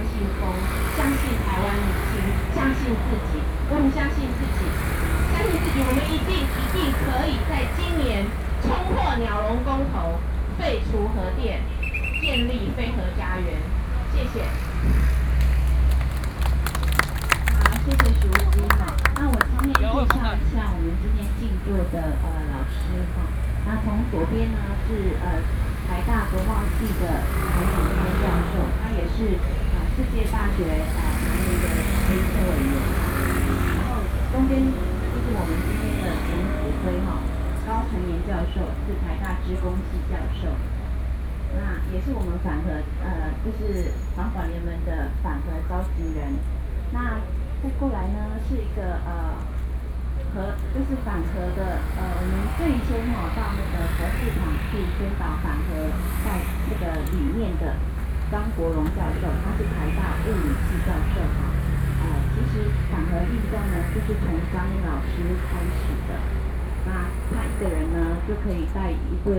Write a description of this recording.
the event to stage 24-hour hunger strike, against nuclear power, Sony PCM D50 + Soundman OKM II